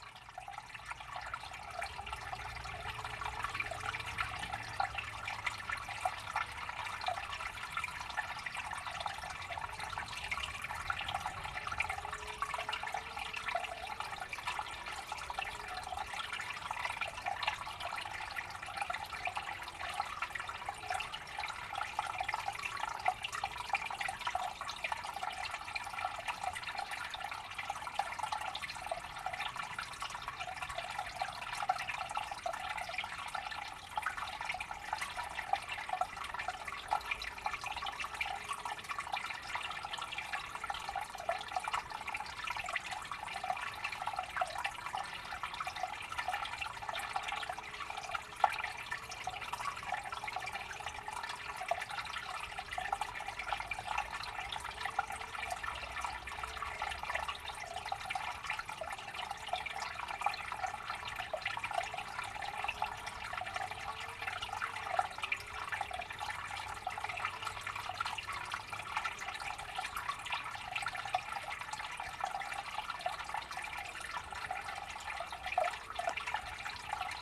Lihuania, Kirkliai, streamlet
small brooklet and lumbermen in the distance
Lithuania, December 2011